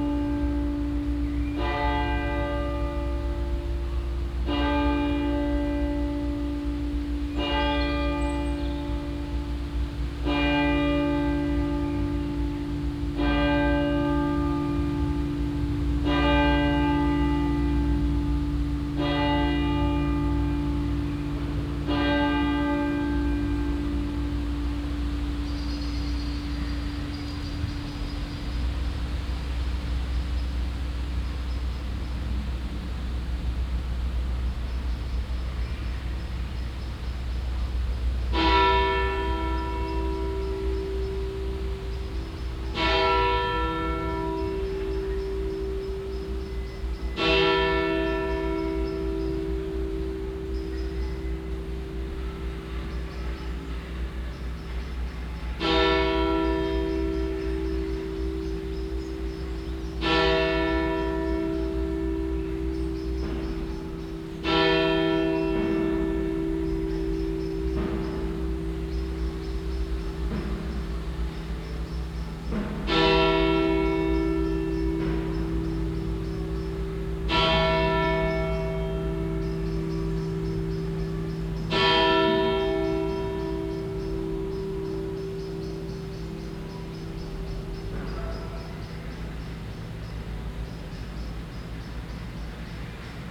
Borbeck - Mitte, Essen, Deutschland - essen, dionysus church, 12 o clock bells
An der Dionysuskirche in Essen Borbeck. Der Klang des Läuten der 12 Uhr Glocken an einem leicht windigem Frühlingstag.
At zje Dionysus church in Eseen Borbeck. The sound of the 12o clock bells at a mild windy sprind day.
Projekt - Stadtklang//: Hörorte - topographic field recordings and social ambiences
14 May, 12:00